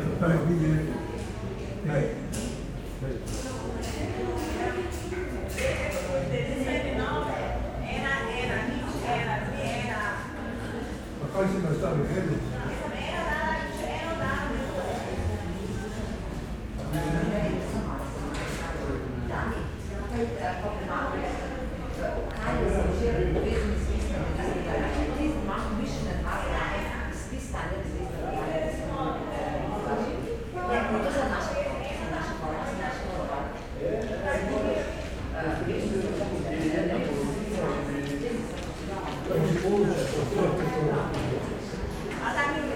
walking around in the main post office
(Sony PCM D50, DPA4060)